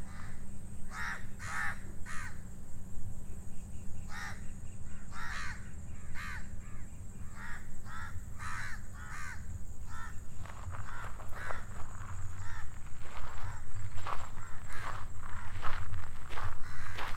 Jalan Pulau Melaka, Taman Pulau Melaka, Melaka, Malaysia - Trail walking
One evening after work I decided to go to this place called Pulau Melaka or in translation Melaka Island. A small man made island is currently developing (shopping malls). Trying to minimize the grip movement with the recorder attached to a mini tripod. Sandy terrain plus the raven give me the creeps.